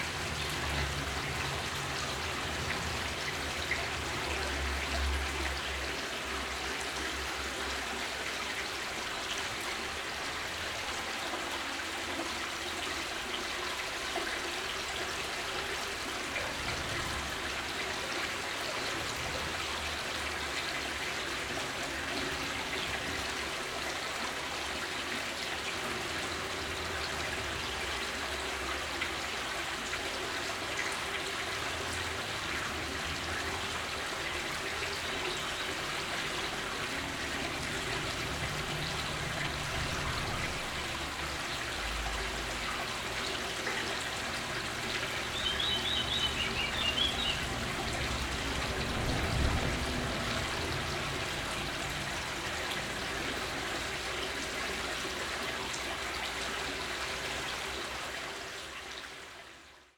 Maribor, Koroska cesta, Vinarjski potok - stream in tube under stret
Vinarjski potok, a little stream coming from the Vinarje area, crosses the street here in a big tube.
(SD702 AT BP4025)
Maribor, Slovenia, 1 June 2012